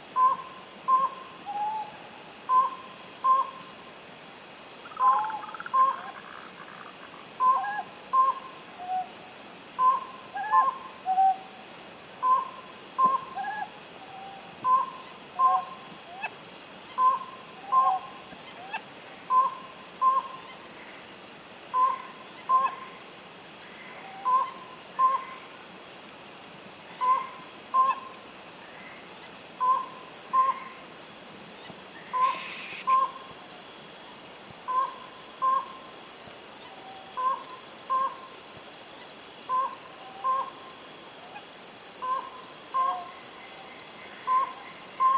Iriomote Jima - Iriomote Jima (daytime and night-time recordings)
Bird and amphibian life on Iriomote
recorded onto a Sony Minidisc recorder
Okinawa-ken, Japan, 5 May 2007, 22:00